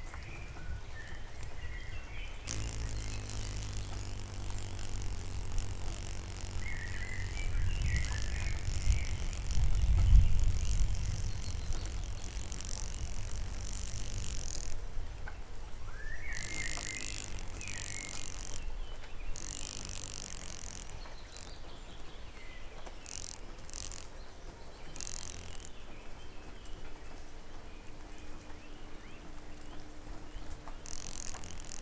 an insect beating its wing on a wooden plank. light rain and drops falling from roof and trees after a downpour. (roland r-07)
pomorskie, RP, 20 June